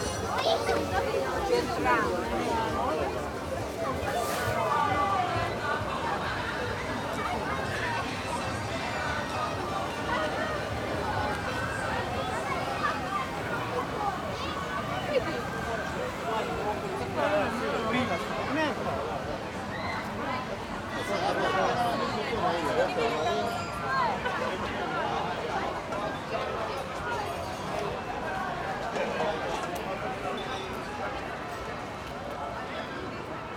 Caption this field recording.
Traditional three-day fair honouring new wine mentioned as early as in the Codex of Kastav dated from 1400. Provision of versatile fair merchandise is accompanied by cultural and entertainment programme.